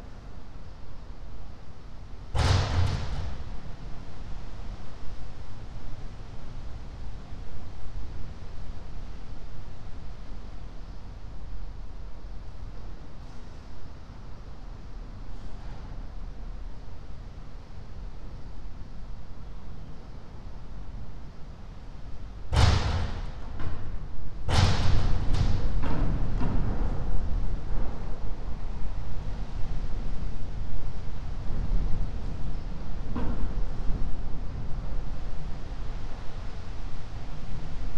Casa Tatu, Montevideo - ambience, wind
Casa Tatu, Montevideo
(remote microphone: Raspberry Pi Zero + IQAudio Zero + AOM5024HDR)